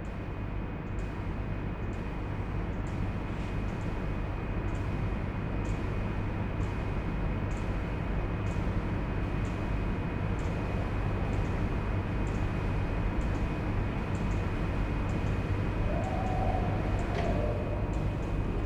Stadt-Mitte, Düsseldorf, Deutschland - Düsseldorf, Schauspielhaus, big stage, audience space
Inside the theatre on the big stage of the house recording the ambience in the audience space. The sound of the room ventilation with regular click sounds from an alarm system. In the background sounds from a rehearsal in the foyer of the house and some doors being closed on the stage.
This recording is part of the intermedia sound art exhibition project - sonic states
soundmap nrw -topographic field recordings, social ambiences and art places
Düsseldorf, Germany, 15 December 2012